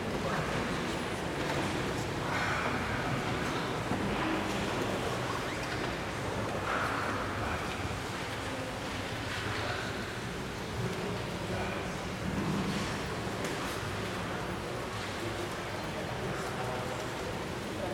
church ambience, Rovinj
sounds of tourists wandering through the church in Rovinj
Rovinj, Croatia, 2 September 2011, 12:00